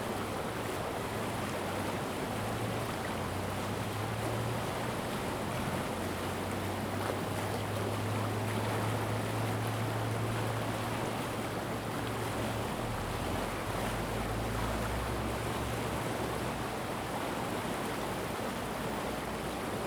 Streams of sound, Very Hot weather
Zoom H2n MS+XY
Hualien County, Ji-an Township